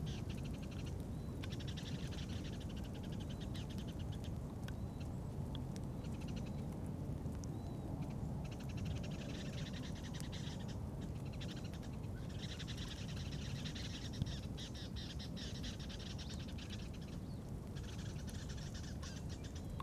Kamay Botany Bay National Park, Kurnell, NSW, Australia - light rain in the afternoon
recorded in kamay botany bay national park on the 1st day of winter. not far from where captain cook landed in 1770, where the gweagal people used to live.
olympus ls-5.
Kurnell NSW, Australia